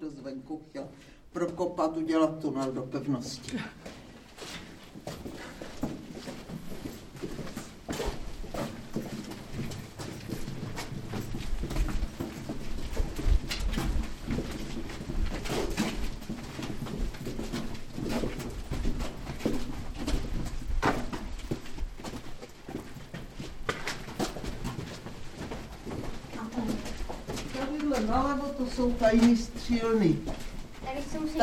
Gorlice, Vysehrad fortification underground system, Prague, Czech Republic - Inside the Gorlice
Excursion to the underground defense system of Vysehrad fortification. The Gorlice underground hall served in 18.century as a gathering place for troops, ammunition and food store. In recent history served as well as a bomb shelter and place to store vegetable - probably potatoes.